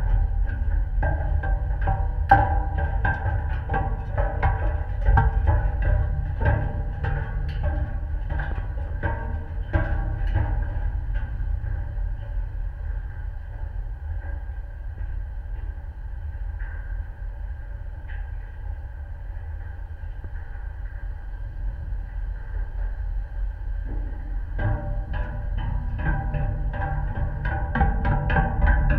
Stena Line, North Sea - up and down
metal steps on deck
26 August 2022